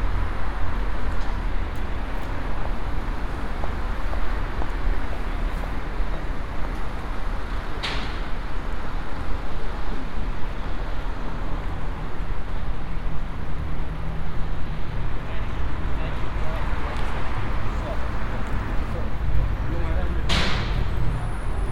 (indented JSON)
{
  "title": "Boulevard Garibaldi, Paris, France - (358 BI) Soundwalk below metro line",
  "date": "2018-09-24 12:31:00",
  "description": "Binaural recording of a walk below a metro line on a Boulevard Garibaldi.\nRecorded with Soundman OKM on Sony PCM D100",
  "latitude": "48.85",
  "longitude": "2.31",
  "altitude": "42",
  "timezone": "Europe/Paris"
}